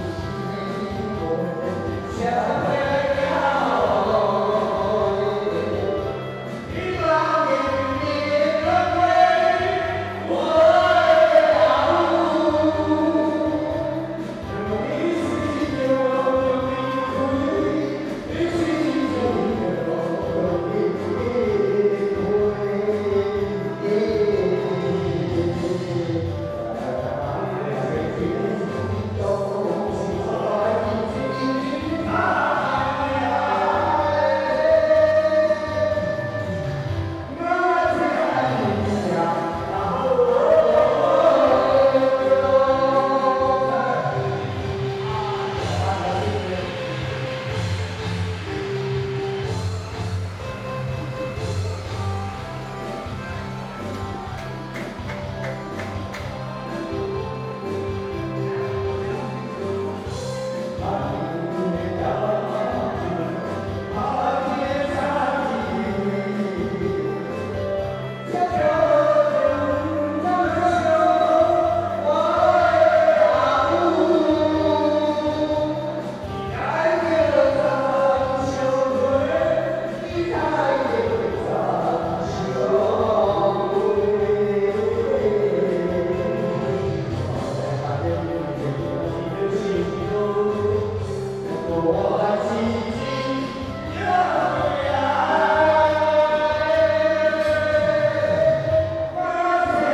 Daren St., Tamsui Dist., Taiwan - Karaoke
Folk Evening party, Dinner Show, Host, Karaoke
Zoom H2n Spatial audio
New Taipei City, Taiwan, 11 June 2016, 20:58